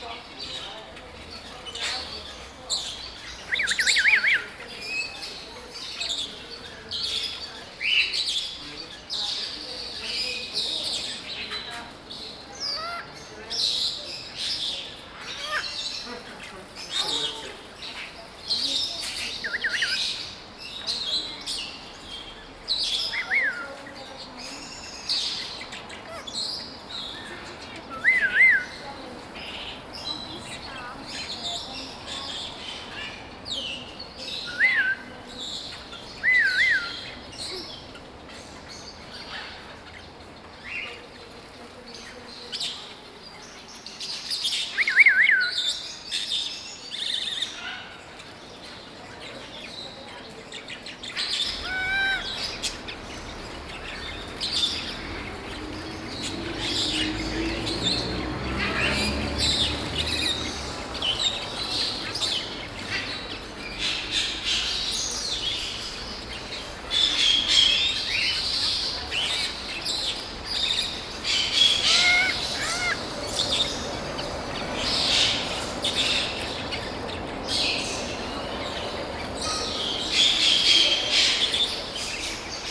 annual exhibition in the glasshouse of the Botanical Garden.